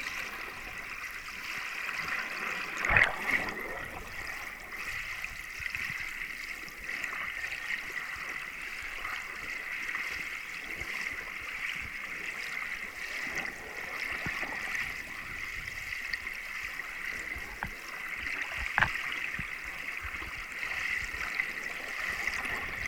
Val Rosandra. Underwater - Val Rosandra.
Underwater recording of the river Rosandra.